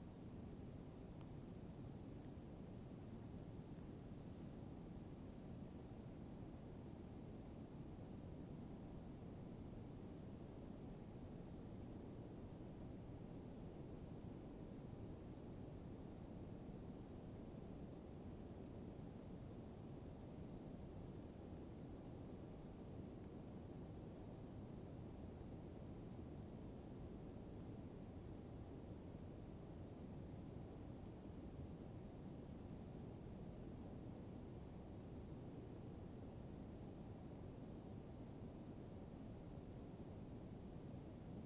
Seno Almirantazgo, Magallanes y la Antártica Chilena, Chile - storm log - distancia
distant waterfall (9,5km) across seno almirantazgo, no wind, ZOOM F1, XYH-6 cap
Unusual calm and clear day at the Almirantazgo Fjord. The waterfall on the other side of the Fjord was almost the only sourche of noise, faint, distant.
Región de Magallanes y de la Antártica Chilena, Chile, February 2021